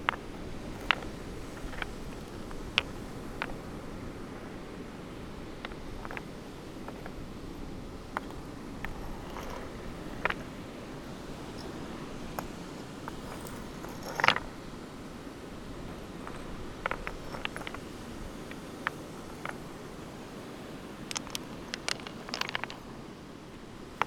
Orzechowo, at the beach - rocks and pebbles
moving about some rocks and pebbles at the beach. shuffling and rummaging. making a pile, then taking it apart, throwing some of the smaller ones over the bigger rocks.